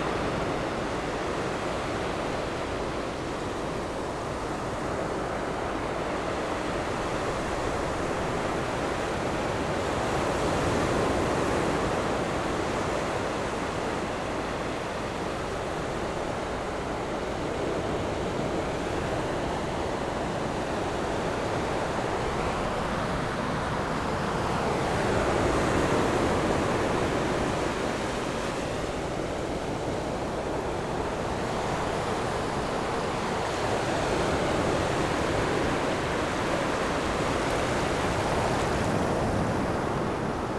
壯圍鄉過嶺村, Yilan County - sound of the waves
Sound of the waves, In the beach
Zoom H6 MS+ Rode NT4